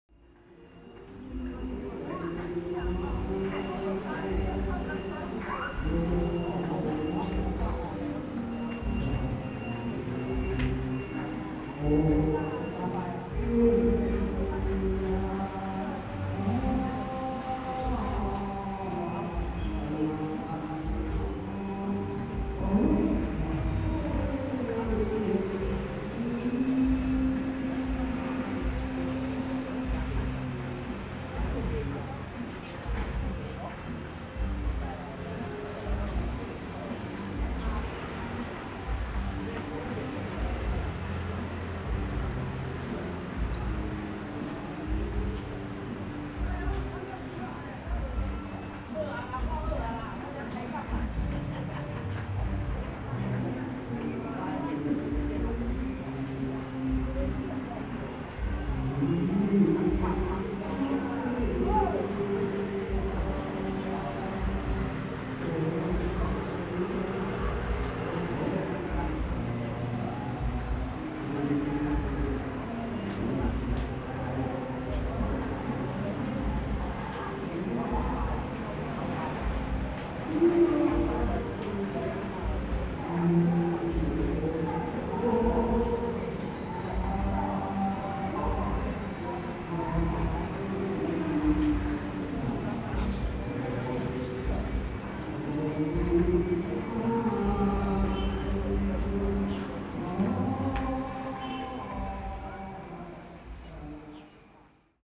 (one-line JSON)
{"title": "Sanmin Park - Community Centre", "date": "2012-03-29 16:18:00", "description": "in the Community Centre, Living in the vicinity of the old people are gathering and singing, Sony ECM-MS907, Sony Hi-MD MZ-RH1 (SoundMap20120329- 28)", "latitude": "22.65", "longitude": "120.30", "altitude": "11", "timezone": "Asia/Taipei"}